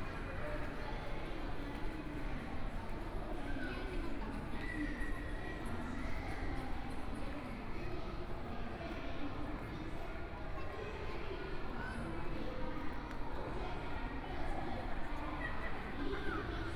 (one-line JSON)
{"title": "Taipei Expo Park - SoundWlak", "date": "2013-08-18 14:27:00", "description": "Holiday Bazaar, Sony PCM D50 + Soundman OKM II", "latitude": "25.07", "longitude": "121.52", "altitude": "5", "timezone": "Asia/Taipei"}